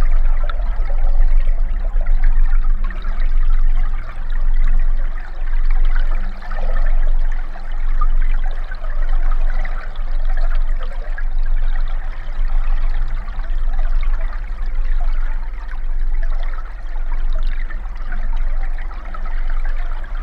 Atkočiškės, Lithuania, flooded
spring, flood. hydrophone in river and geophone on metallic structure above
28 February, 15:20